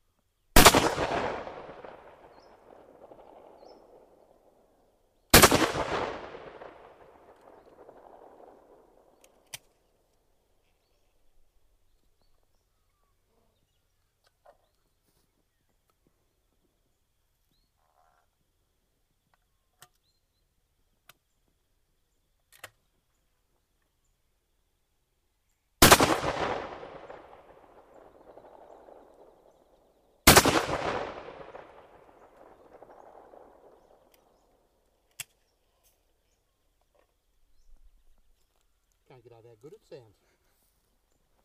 Wimmera River, rifle shot, (Sean OBrien)
Quantong VIC, Australia